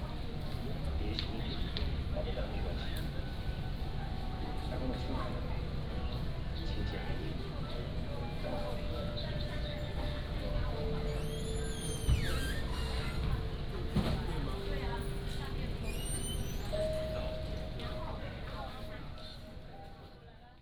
Seyseykedan No Sikoki No Pongso No Tao, Taiwan - In the airport lobby
In the airport lobby
Taitung County, Lanyu Township, Lanyu Airport (KYD)